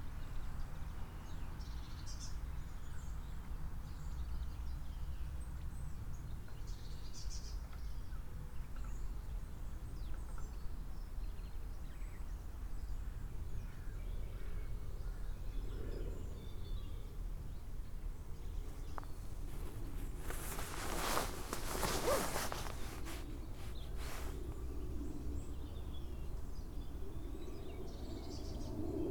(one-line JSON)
{"title": "Punnetts Town, UK - Great Spotted Woodpecker Drumming", "date": "2017-02-04 14:00:00", "description": "Great Spotted Woodpecker drumming in nearby Oak tree. Tascam DR-05 internal mics with wind muff.", "latitude": "50.95", "longitude": "0.31", "altitude": "123", "timezone": "GMT+1"}